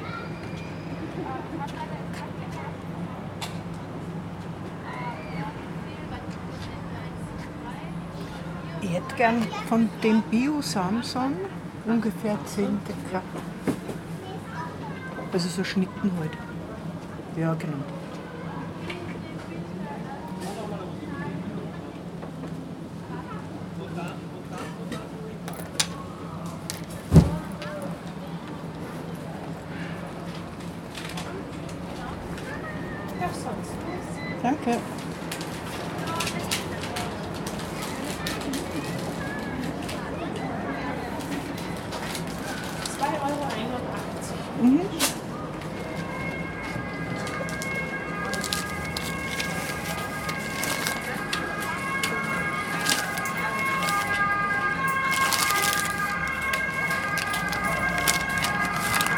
Erzabt-Klotz-Straße, Salzburg, Österreich - Biomarkt Unipark
Jeden Freitag BIO Markt am Unipark Nonntal (Vorübergehend zum Standort Kajetanerplatz, der renoviert wird )
Every Friday BIO Market at Unipark Nonntal (Temporarily to the Kajetanerplatz location which is renovated).